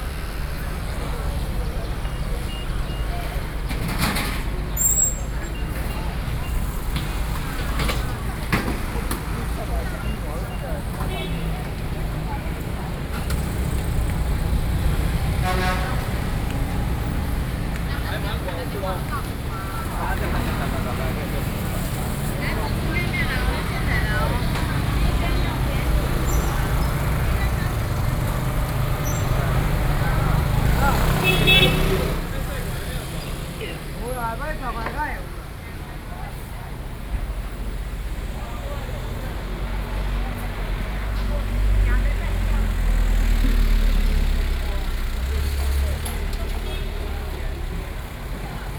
Walking through the traditional market, Traffic Sound
Binaural recordings, Sony PCM D50
8 July 2015, 07:01